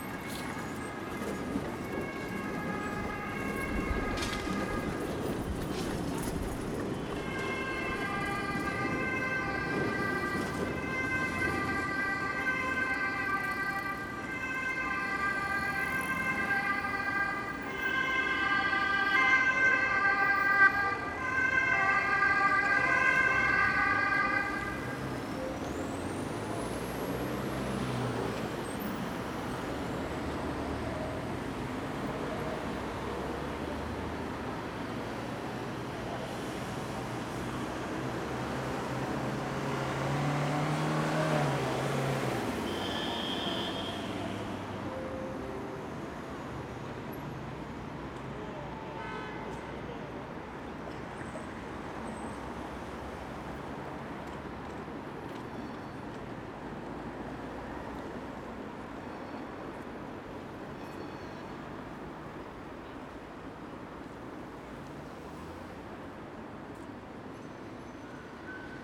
Vienna, Schwartzenbergplatz
Traffic noise of trucks, cars, police, bikes and trams.